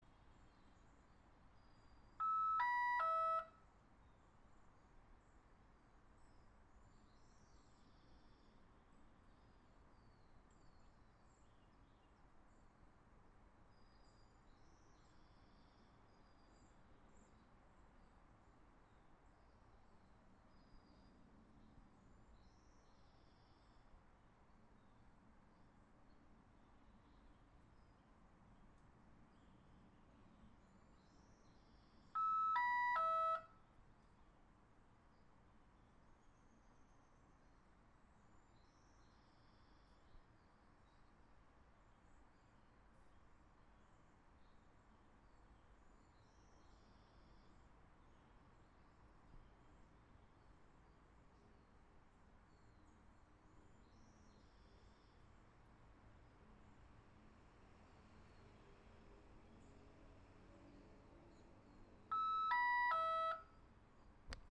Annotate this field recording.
end of washing machine cicle - as a part of most annoying sounds - part 1.